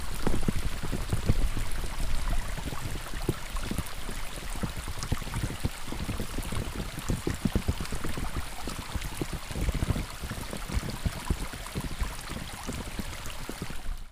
los angeles, 2007, rain in the mountains, invisisci